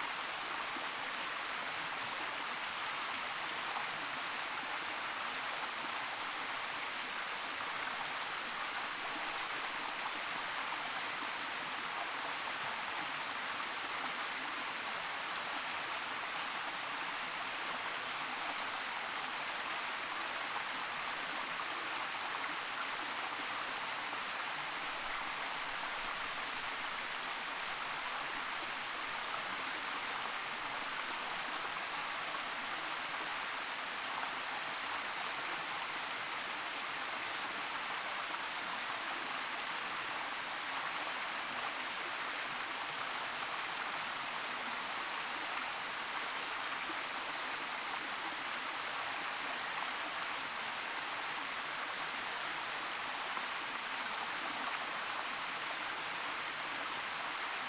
Conjunction between Chat To River & Lotus Stream, Maclehose Trail Sec., Tai Lam, Hong Kong - Conjunction between Chat To River & Lotus Stream
The recording is taken at a junction of the stream, Chat To River which is named for having 7 bridge constructed across as ""Chat"" is seven in Chinese, and Lotus Stream which is named for the stream from Lin Fa Shan (Lotus Hill) to the Tai Lam Chung Reservoir. You can listen to the soundscape of running water alongside some bird callings.
七渡河溪流建有有七條石橋橫跨連接路徑故而命名，蓮花石澗則起源自蓮花山流入大欖水塘，這點位於兩條水流的交匯點。你可以聽到流水伴著鳥鳴的聲景。
#Water, #Stream, #Bird
香港 Hong Kong, China 中国, 28 December 2018, ~15:00